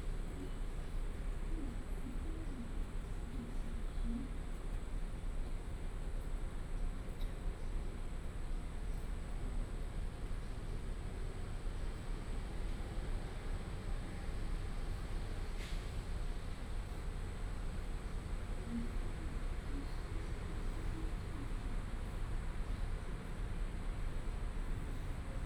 Taoyuan HSR Station, Zhongli District, Taoyuan City - at the station platform
At the station platform, MRT train passing by
Binaural recordings, Sony PCM D100+ Soundman OKM II
28 February 2018, 22:56